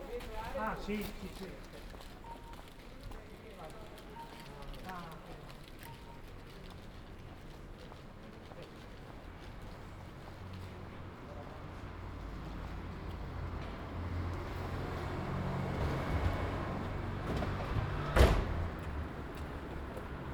Ascolto il tuo cuore, città. I listen to yout heart, city. Several chapters **SCROLL DOWN FOR ALL RECORDINGS** - Shopping afternoon in the time of COVID19 Soundwalk
"Shopping afternoon in the time of COVID19" Soundwalk
Chapter XIX of Ascolto il tuo cuore, città. I listen to yout heart, city. Chapter XIX
Monday March 23 2020. Short walk and shopping in the supermarket at Piazza Madama Cristina, district of San Salvario, Turin thirteen after emergency disposition due to the epidemic of COVID19.
Start at 3:10 p.m., end at h. 3:48 p.m. duration of recording 38’00”''
The entire path is associated with a synchronized GPS track recorded in the (kml, gpx, kmz) files downloadable here:
Piemonte, Italia, 23 March 2020, 03:10